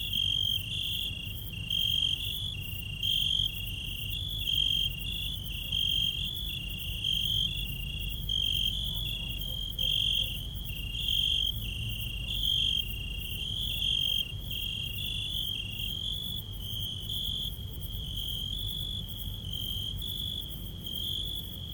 Patmos, Vagia, Griechenland - Nachtstimmung
Grillen
Juni 2002